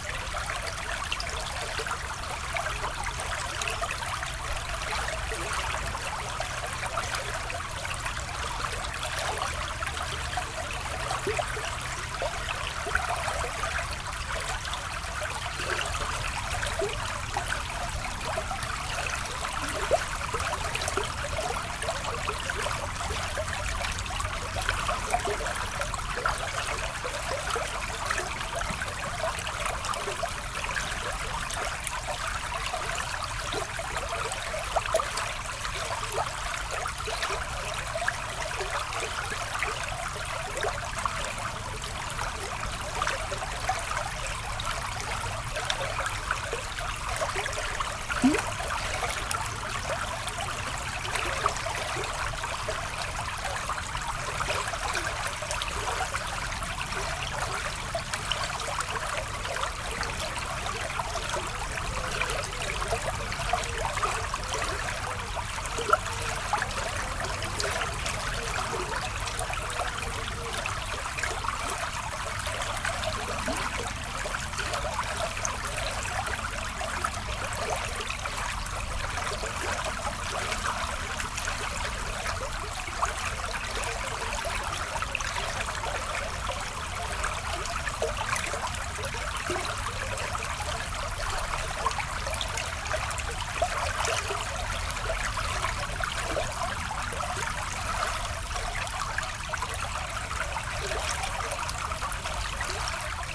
Mont-Saint-Guibert, Belgique - Houssière river
The Houssière river, flowing in the small and quiet village of Hévillers.
Mont-Saint-Guibert, Belgium, 14 August 2016